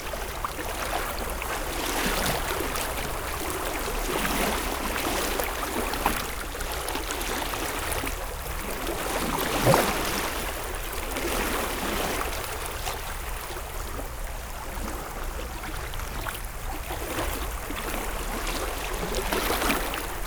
The city was flooded during the night, because of a very big storm in the city of Genappe. Normally on this place there's no river, but this morning there's water and waves.